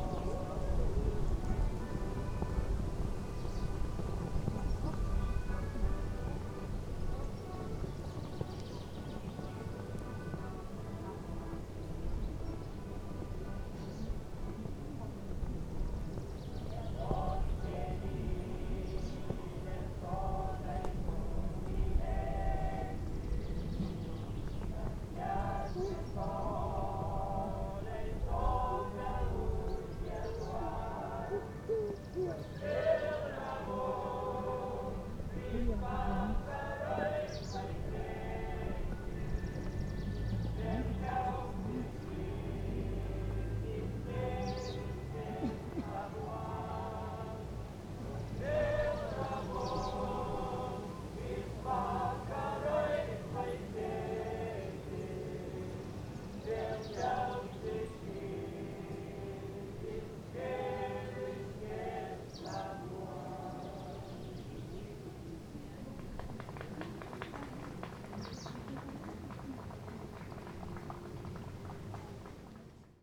recorded from the distance not so far from the flags plopping in the wind
Utena, Lithuania, 16 June 2012, 17:15